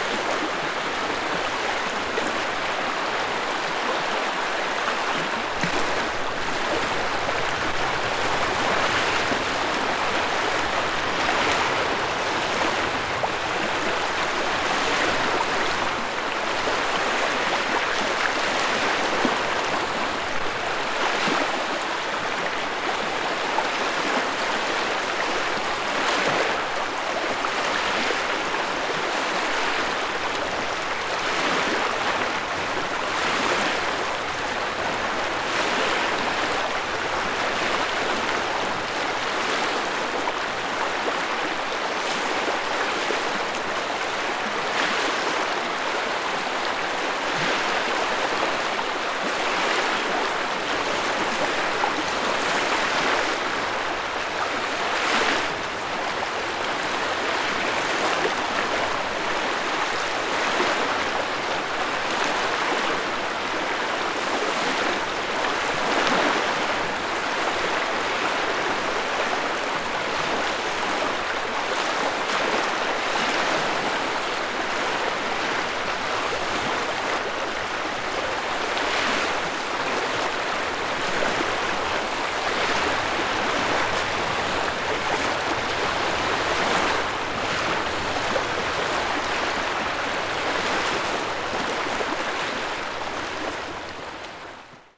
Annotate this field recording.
Seasounds from beach. Rode NT4. (Slight clipping and wind noise)